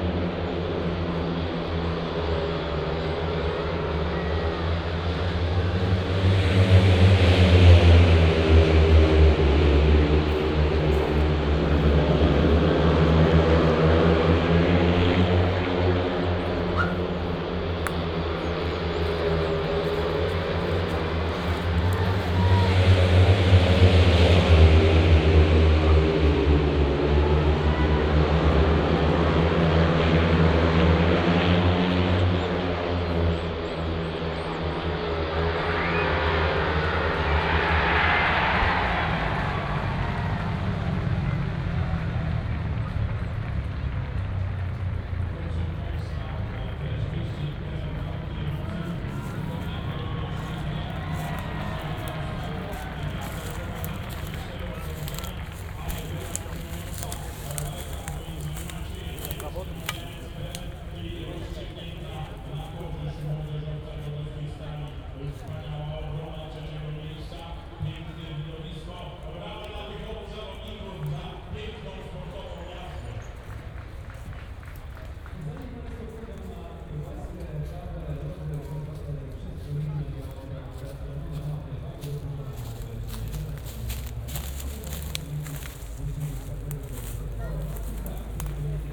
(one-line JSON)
{"title": "Golecin district, speedway racing stadium - speedway race", "date": "2016-05-01 17:27:00", "description": "(binaural). one round of speedway race. fans cheering, racers being introduced, roar of the engines, announcer summarizes the race. (sony d50 + luhd PM-01Bins)", "latitude": "52.43", "longitude": "16.89", "altitude": "83", "timezone": "Europe/Warsaw"}